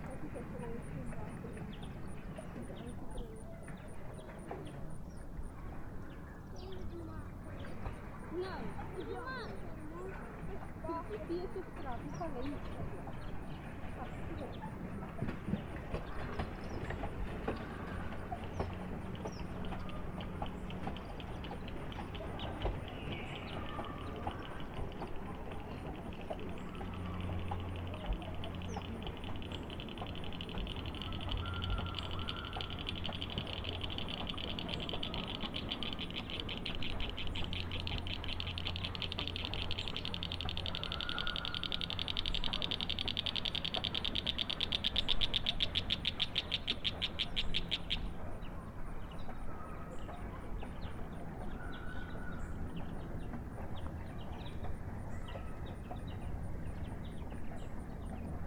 Kunkel Lake, Ouabache State Park, Bluffton, IN, USA - Sounds from the beach, a paddleboat, and a cicada, Ouabache State Park, Bluffton, IN 46714, USA
Sounds from the beach, a paddleboat, and a cicada at Ouabache State Park. Recorded at an Arts in the Parks Soundscape workshop at Ouabache State Park, Bluffton, IN. Sponsored by the Indiana Arts Commission and the Indiana Department of Natural Resources.
Indiana, USA, 21 July